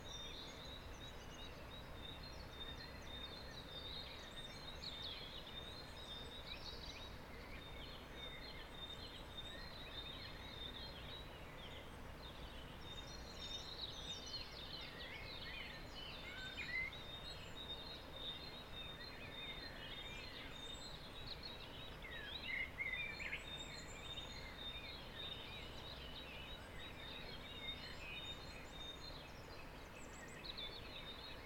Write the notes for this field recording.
I woke up in the morning, opened the window and recorded birds chorus.